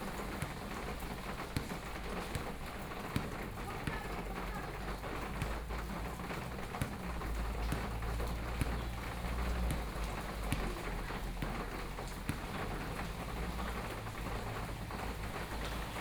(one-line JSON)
{"title": "憲明國小, Sanxing Township - Rainy Day", "date": "2014-07-25 15:18:00", "description": "Rainy Day, Thunderstorm, Small village, Traffic Sound, Play basketball, Birdsong, At the roadside\nSony PCM D50+ Soundman OKM II", "latitude": "24.66", "longitude": "121.62", "altitude": "141", "timezone": "Asia/Taipei"}